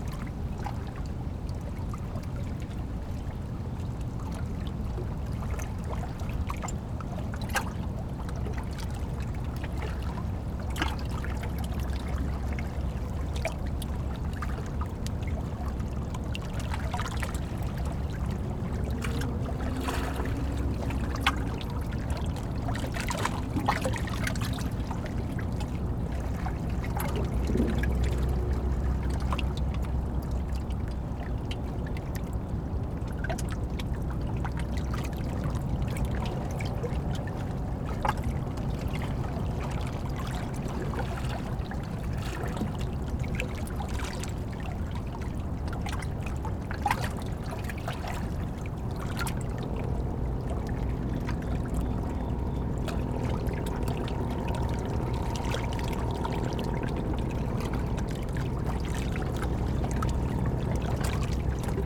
Red Flynn Dr, Beacon, NY, USA - Hudson River at Pete And Toshi Seeger Riverfront Park
Hudson River at Pete And Toshi Seeger Riverfront Park, Beacon, NY. Sounds of the Hudson River. Zoom H6
5 October 2017, 15:20